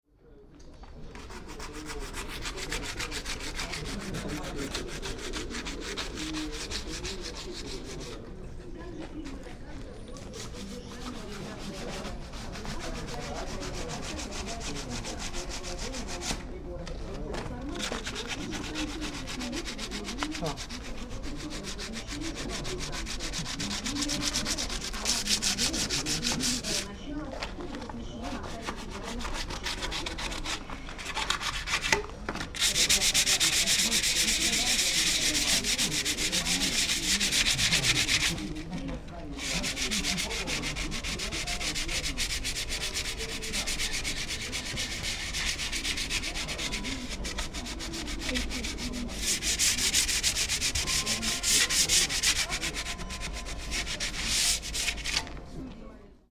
Handyman is cleaning the rust from the kiosk (jaak sova)